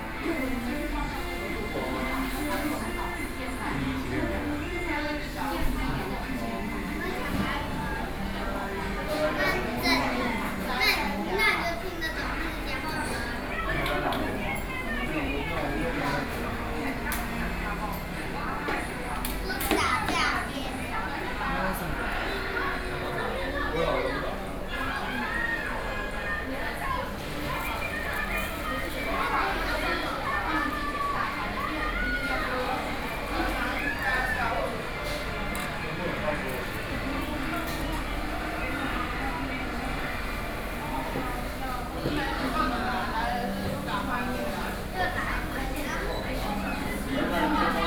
Gongguan, Taipei City - In the Restaurant
In the Restaurant, Sony PCM D50 + Soundman OKM II
Daan District, Taipei City, Taiwan, July 2013